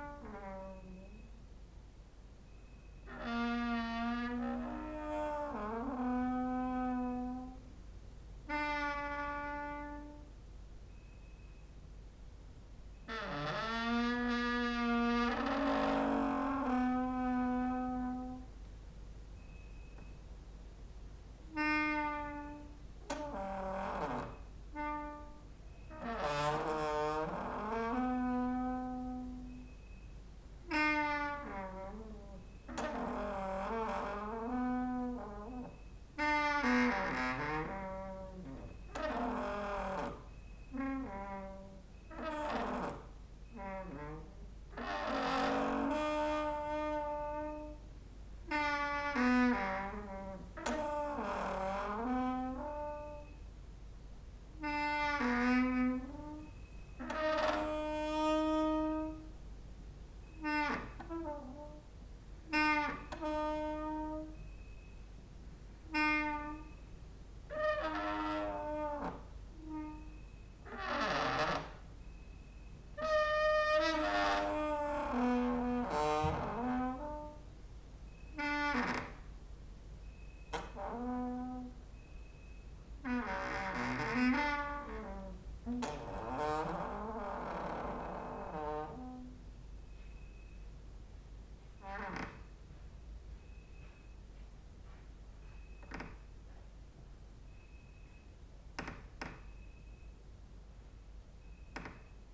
cricket outside, exercising creaking with wooden doors inside